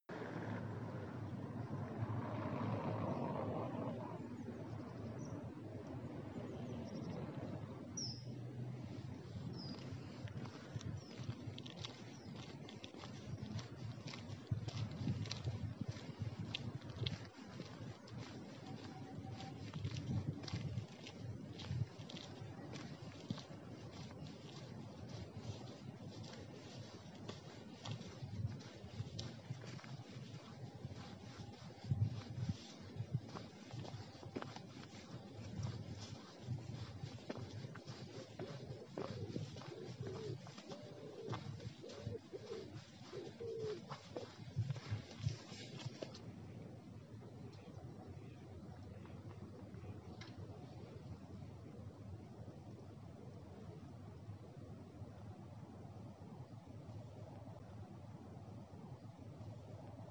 Křižovnické nám., Praha-Staré Město, Česko - Silence in hard time in Prague.
Quiet Prague in hard time, the time with the coronavirus. Person and Prague. Nobody will hear the same silent in one of the most busy place in Prague in future.